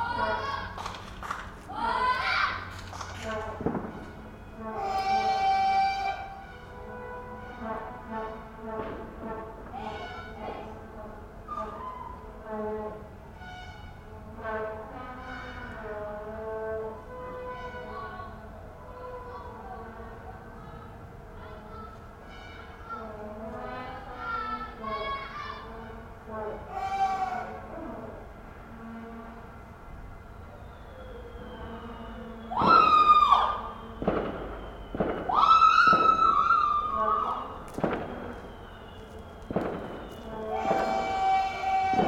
Stationsbuurt, Den Haag, Netherlands - Football Shouts
Vuvuzelas, shouts, screams and fireworks after Holland-Uruguay
4 June, 20:10